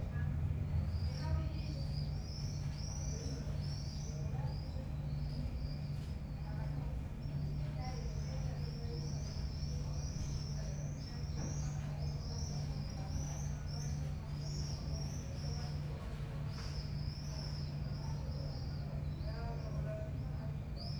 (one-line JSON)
{"title": "Berlin Bürknerstr., backyard window - evening ambience", "date": "2016-07-18 21:35:00", "description": "world listening day, sounds lost and found: this place is already a personal sonic archive...\n(SD702, MKH8020)", "latitude": "52.49", "longitude": "13.42", "altitude": "45", "timezone": "Europe/Berlin"}